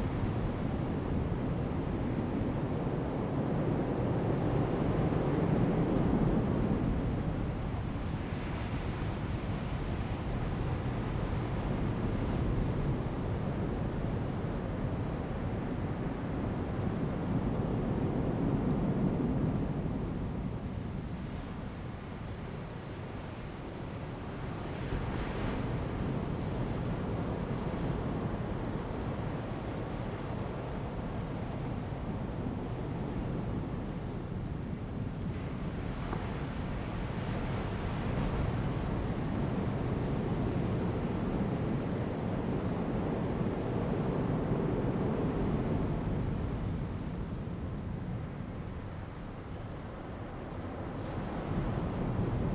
南田海岸, 台東縣達仁鄉 - In the bush
At the beach, Sound of the waves, In the bush
Zoom H2n MS+XY